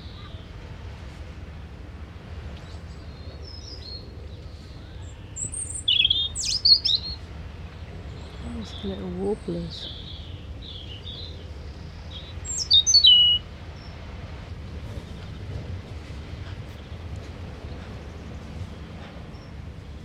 Newtons Cove, Weymouth, Dorset, UK - under trees at Newtons Cove
wildlife at Newtons Cove.